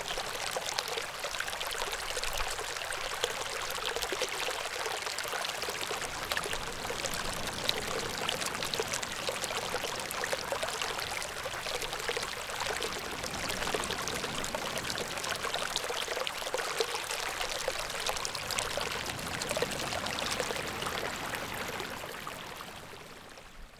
建農里, Taitung City - Streams
sound of the Streams
Zoom H6